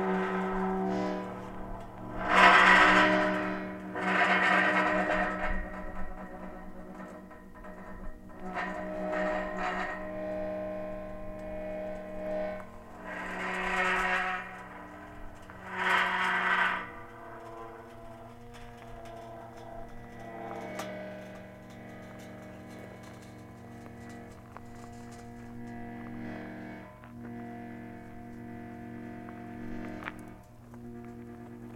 Ruta, Puerto Progreso, Primavera, Santa Cruz, Chile - storm log - faro gate
rusty gate to faro road, wind SW 12 km/h, ZOOM F!, XYH-6 cap
One of the countless cattle fence gates. Two wings, Heavy, rusty, noisy.